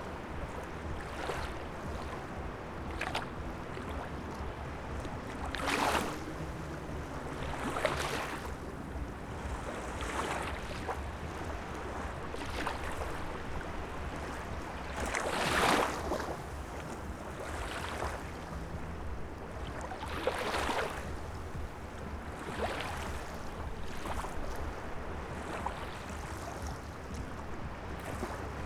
Latvia, Jurmala, beach at evening
some live jazz musicians inn the cafe end their play and then the concert of the waves